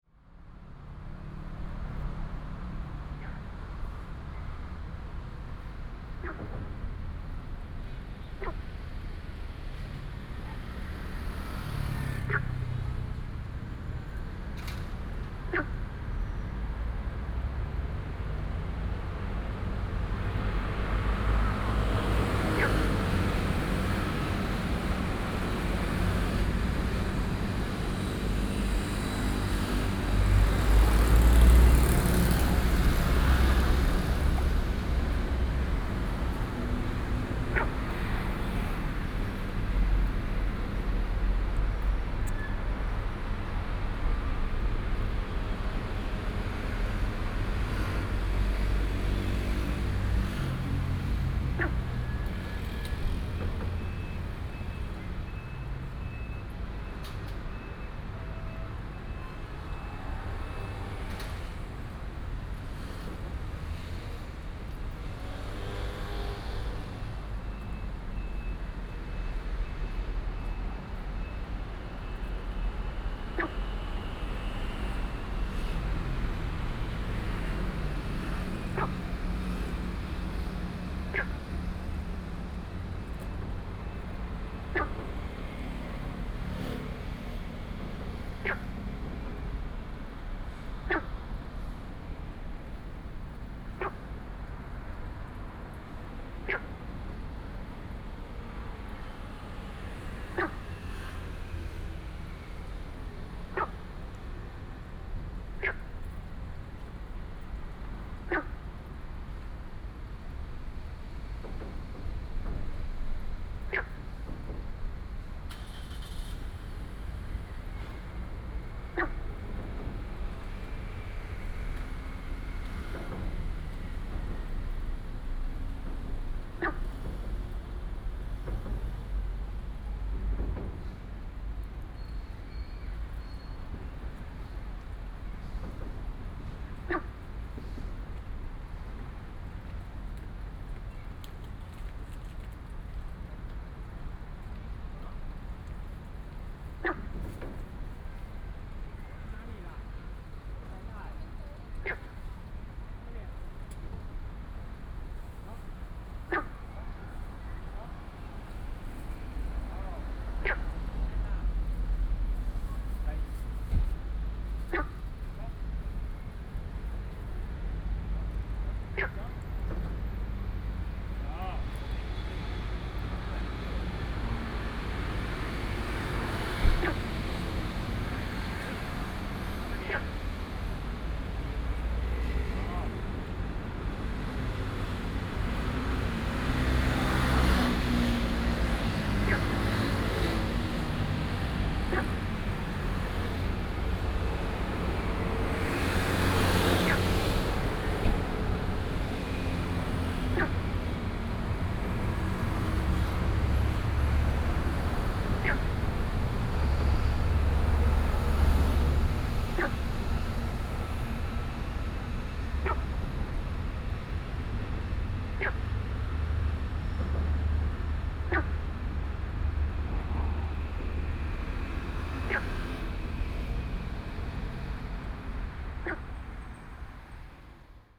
Sec., Xinhai Rd., Da'an Dist. - Traffic and Frog sound
Traffic Sound, Frog sound, Next to the park